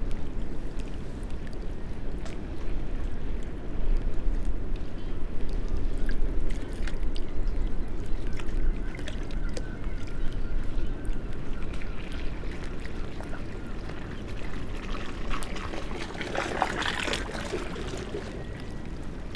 {
  "title": "Amble Pier, Morpeth, UK - pattering waves ... up ..? and back ..?",
  "date": "2017-09-29 06:50:00",
  "description": "Amble pier ... pattering waves ... waves producing a skipping effect by lapping metal stancheons that separate the main stream from a lagoon ... recorded using a parabolic reflector ...",
  "latitude": "55.34",
  "longitude": "-1.57",
  "timezone": "Europe/London"
}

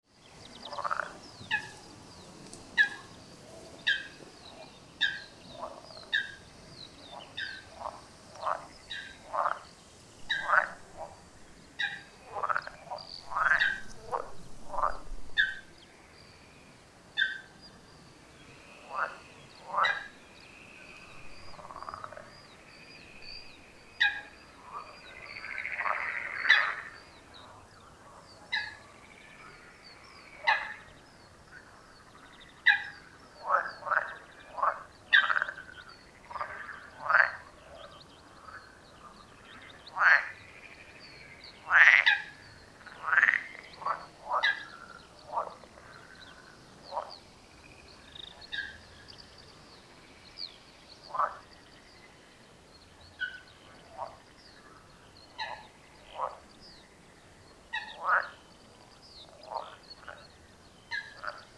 {
  "title": "Lithuania, soundy swamp",
  "date": "2011-05-14 17:00:00",
  "description": "swamp, frogs amd ever present birds",
  "latitude": "55.49",
  "longitude": "25.72",
  "timezone": "Europe/Vilnius"
}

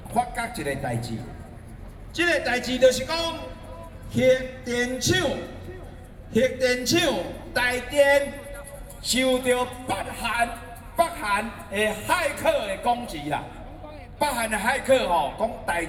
{
  "title": "Ketagalan Boulevard, Taipei City - anti-nuclear protesters",
  "date": "2013-05-19 20:08:00",
  "description": "anti-nuclear protesters, spech, Sony PCM D50 + Soundman OKM II",
  "latitude": "25.04",
  "longitude": "121.52",
  "altitude": "8",
  "timezone": "Asia/Taipei"
}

